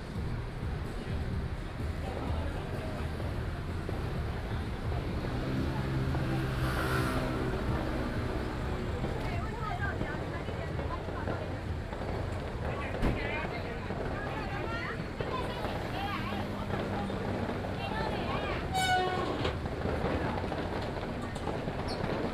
Traditional temple festivals, Fireworks sound, Traffic Sound, Cicada sounds